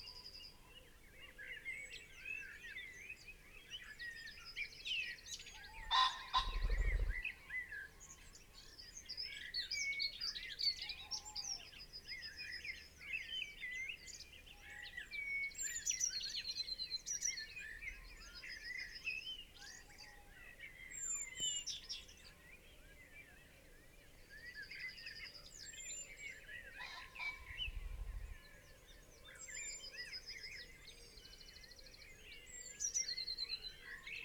Green Ln, Malton, UK - dawn chorus in the pit ... sort of ...
dawn chorus in the pit ... sort of ... lavalier mics clipped to twigs ... bird call ... song ... from ... buzzard ... tawny owl ... chaffinch ... wren ... dunnock ... willow warbler ... pheasant ... red-legged partridge ... wood pigeon ... blackcap ... blue tit ... great tit ... yellowhammer ... linnet ... greylag goose ... crow ... fieldfare ... distant roe deer can be heard 13:30 + ...
21 April 2019, 05:00